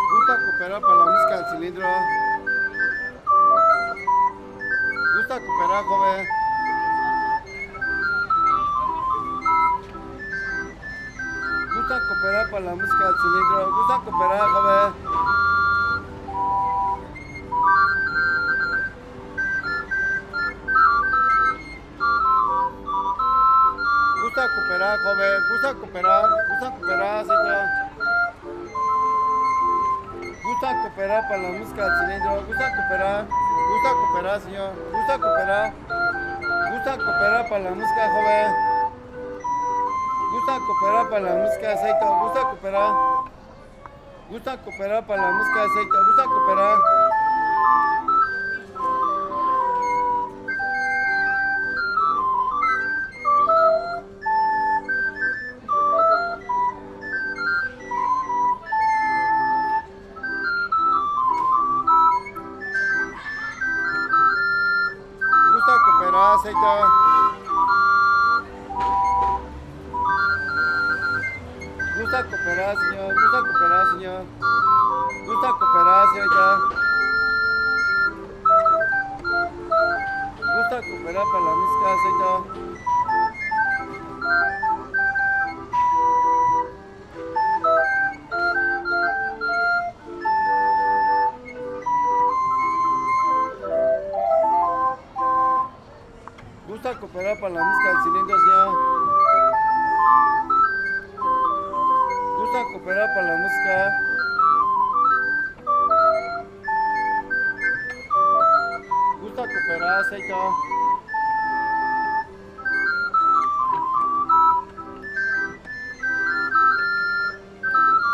de Mayo, Centro histórico de Puebla, Puebla, Pue., Mexique - Puebla - Orgue de Barbarie
Puebla (Mexique)
Le joueur d'orgue de Barbarie